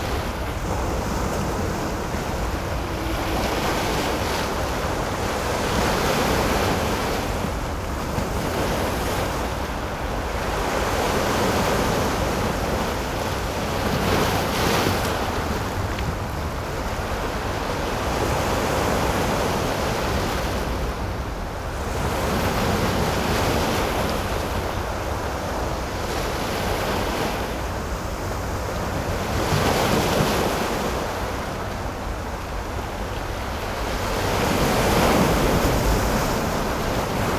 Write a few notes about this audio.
the waves, traffic sound, Sony PCM D50